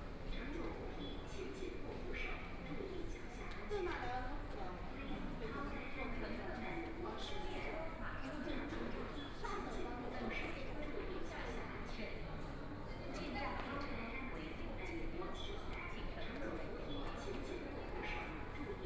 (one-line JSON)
{"title": "Laoximen Station, Shanghai - walking in the Station", "date": "2013-11-28 13:09:00", "description": "walking in the Laoximen Station, Binaural recordings, Zoom H6+ Soundman OKM II", "latitude": "31.22", "longitude": "121.48", "altitude": "13", "timezone": "Asia/Shanghai"}